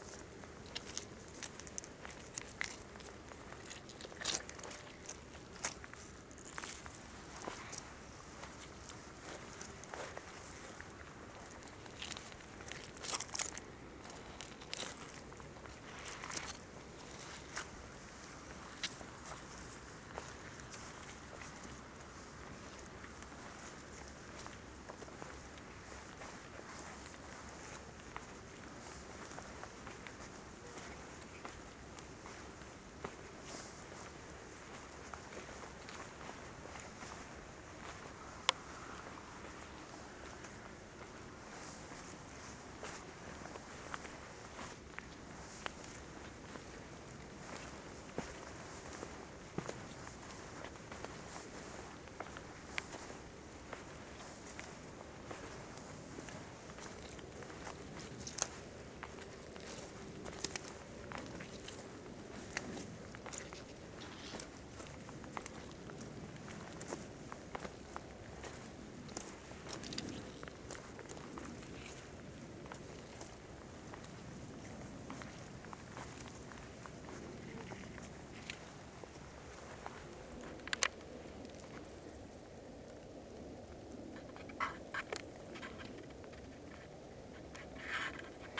{
  "title": "Po River, Calendasco (PC), Italy - walking into mud",
  "date": "2012-10-30 17:32:00",
  "description": "light rain, dark sky at dusk, stading under trees, then walking on muddy terrain.",
  "latitude": "45.10",
  "longitude": "9.57",
  "altitude": "53",
  "timezone": "Europe/Rome"
}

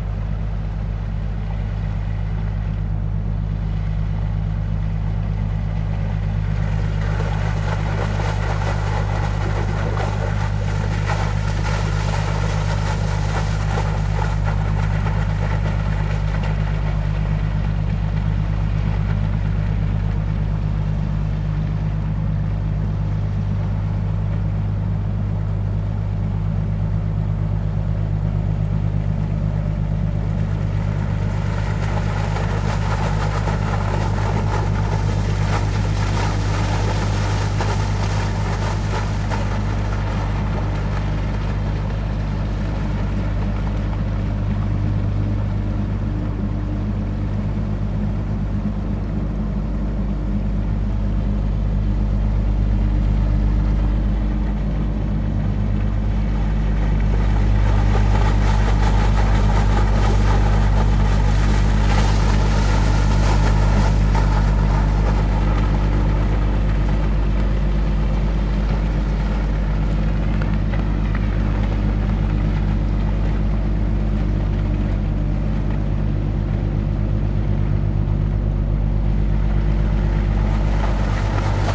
{
  "title": "Sint-Annabos, Antwerpen, België - Rivierbank Sint-Anna",
  "date": "2019-02-24 13:33:00",
  "description": "[Zoom H4n Pro] Water pipe discharging water into the Schelde, boat passing by.",
  "latitude": "51.24",
  "longitude": "4.36",
  "altitude": "5",
  "timezone": "Europe/Brussels"
}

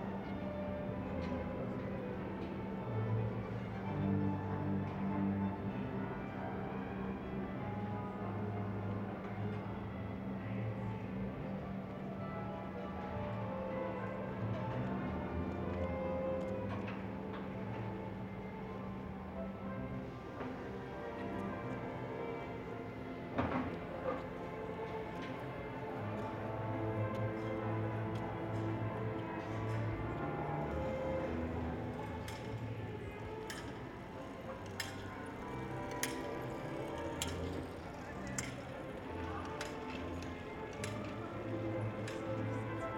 {
  "title": "Seitengasse, Salzburg, Österreich - Komposition der Geräusche",
  "date": "2007-04-17 18:02:00",
  "description": "Strassenmusik aus einer Seitengasse, orchestriert von einem Handwerker. Gegen Ende fragt mich ein Autofahrer, der mehrmals beim aus, oder ausladen die Autotür krachen läst, ob er meine Tonaufnahme störe. (Bin nicht mehr sicher ob der Aufnahmeort korrekt ist.)",
  "latitude": "47.80",
  "longitude": "13.05",
  "altitude": "434",
  "timezone": "Europe/Vienna"
}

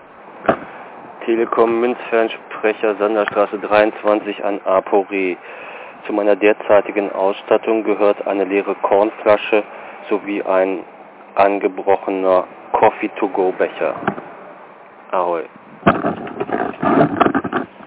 {
  "title": "münzfernsprecher, sanderstr. - radio aporee ::: münzfernsprecher, sanderstr. 23 ::: 27.04.2007 12:29:55",
  "latitude": "52.49",
  "longitude": "13.43",
  "altitude": "46",
  "timezone": "GMT+1"
}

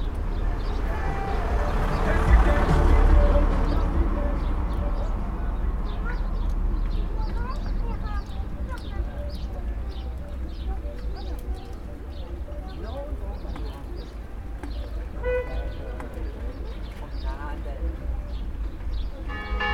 {
  "title": "Pont routier dit pont suspendu de Seyssel ou pont de la Vierge noire, Seyssel, France - Midi tapante",
  "date": "2022-07-17 12:00:00",
  "description": "Au milieu du vieux pont de Seyssel pour une pêche magnétique avec deux cubes néodyme au bout de fil inox 0,2, but récupération d'une pièce en caoutchouc située quelques mètres en contre-bas, pour Stéphane Marin, c'est un exemple de sérendipité, la cloche de l'église de la Haute-Savoie sonne midi, le son se répercute sur les façades de l'Ain de l'autre côté du Rhône, le son réfléchi est plus fort que le son source, c'est dû à la position du ZoomH4npro, passage d'un groupe de motards et vers la fin on peut entendre la rencontre des deux aimants qui viennent pincer la pièce à récupérer.",
  "latitude": "45.96",
  "longitude": "5.83",
  "altitude": "255",
  "timezone": "Europe/Paris"
}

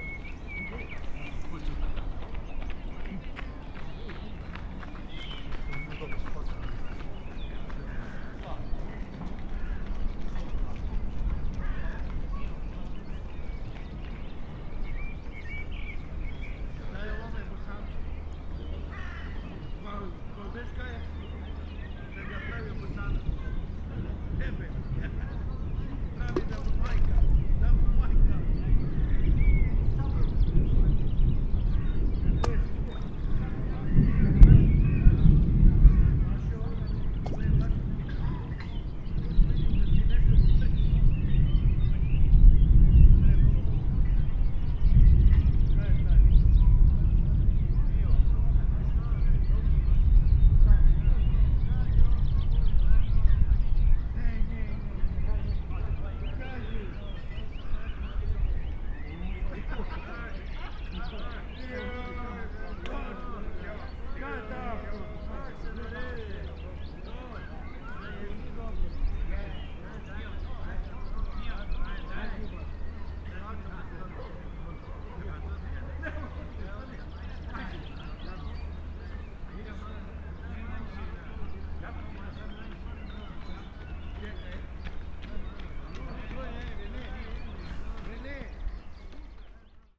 {
  "title": "river Drava, Na Otok, Maribor - riverside ambience",
  "date": "2017-04-10 18:00:00",
  "description": "late afternoon spring ambience at river Drava, Maribor, rumble of distant thunder.\n(Sony PCM D50, Primo EM172)",
  "latitude": "46.57",
  "longitude": "15.62",
  "altitude": "258",
  "timezone": "Europe/Ljubljana"
}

